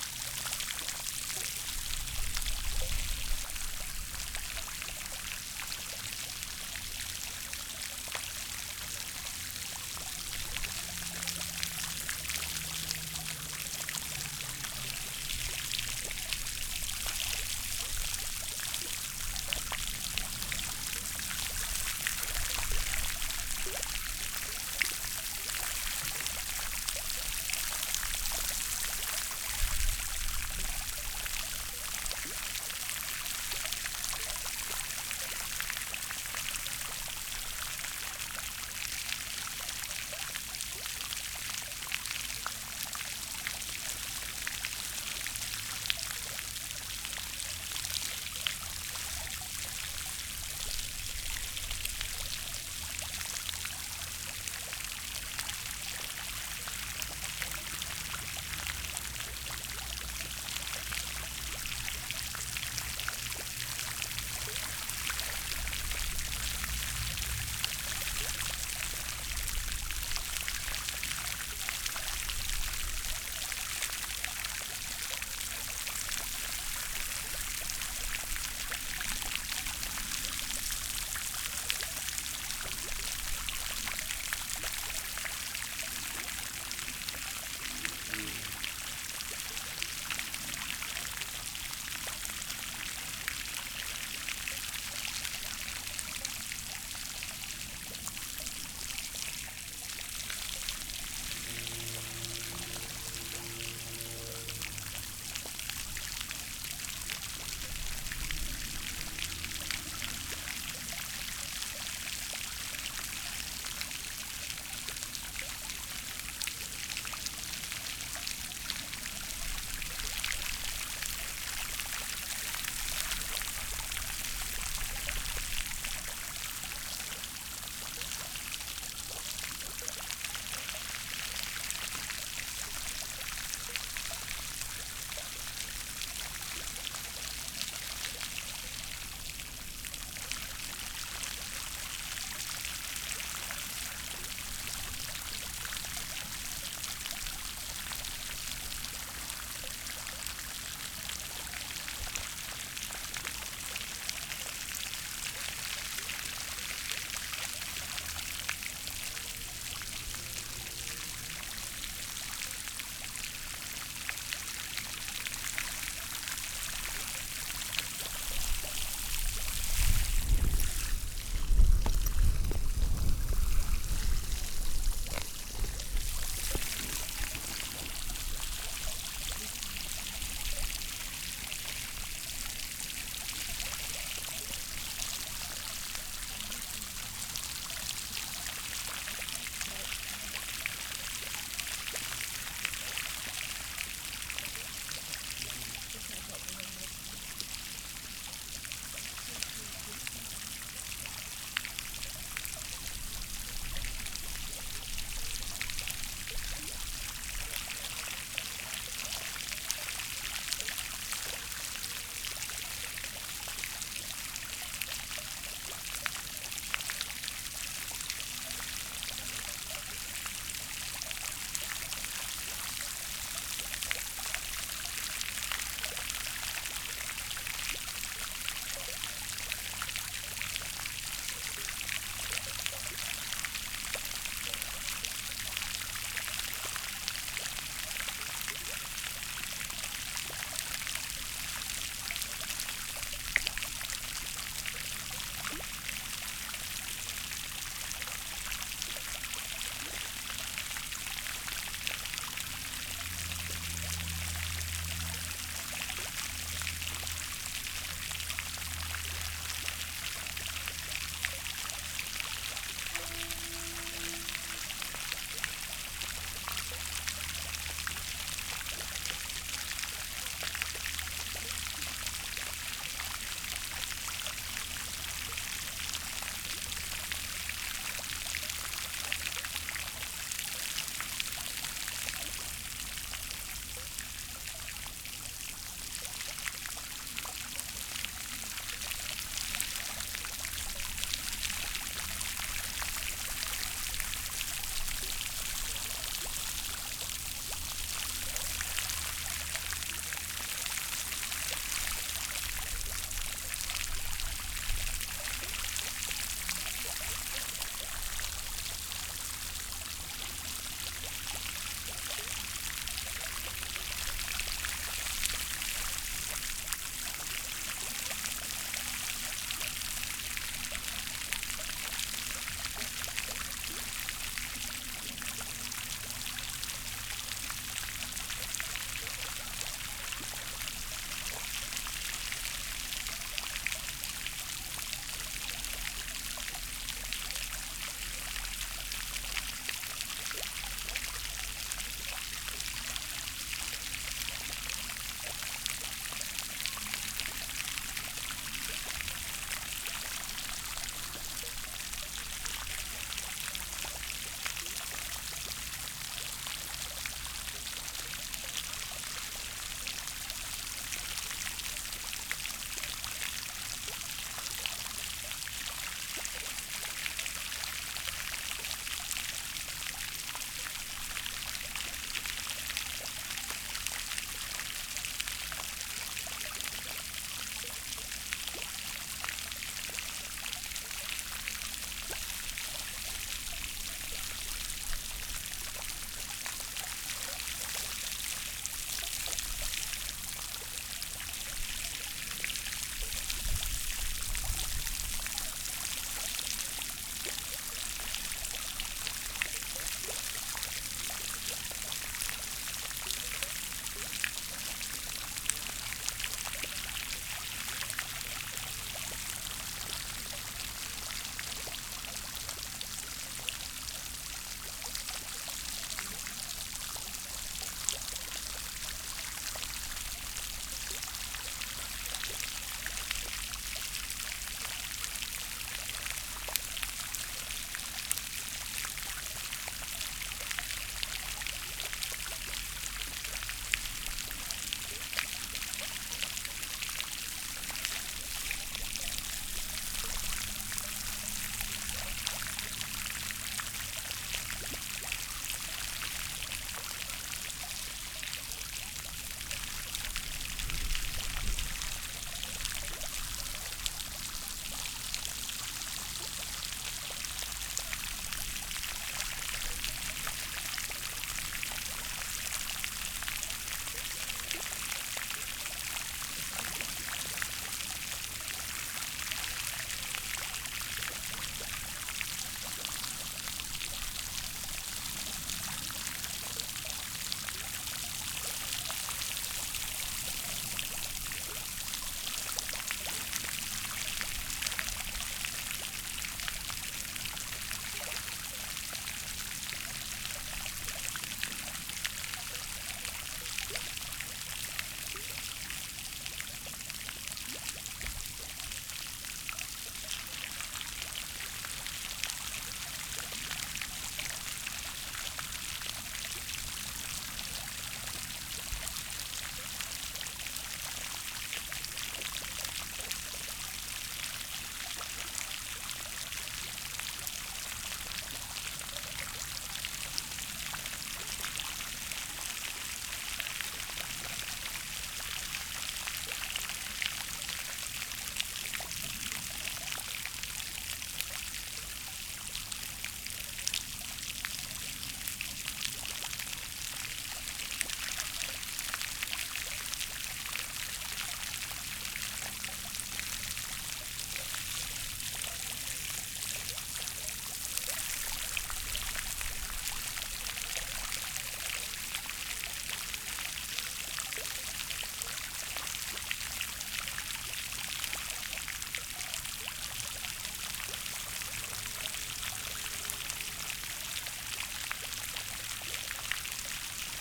Back Ln, Malton, UK - fountainette ...
Scampston walled garden ... fountainette ... lavalier mics clipped to sandwich box ... plume of water blown by wind ...